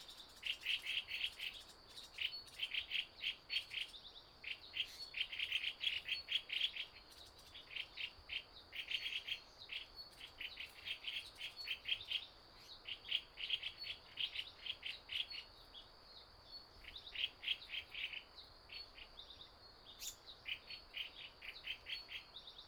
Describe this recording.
early morning, In the bush, Various bird calls, Insect noise, Stream sound